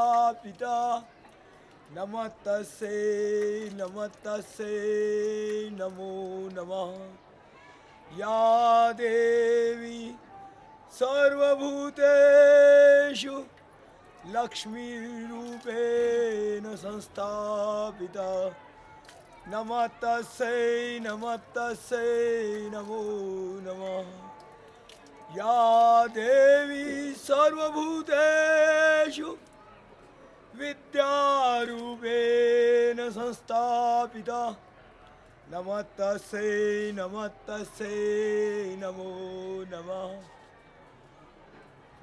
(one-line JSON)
{"title": "Jodhpur, Rajasthan, Inde - Jodhpur - Ambiance temple", "date": "2007-11-24 14:00:00", "description": "Jodhpur - Rajasthan\nUn chauffeur de rickshaw s'amuse de mon matériel de \"preneur de son\" et tient à m'interpréter un chant à la gloire des divinités hindous.\nFoxtex FR2 + Audio-Technica AT825", "latitude": "26.28", "longitude": "73.05", "altitude": "266", "timezone": "Asia/Kolkata"}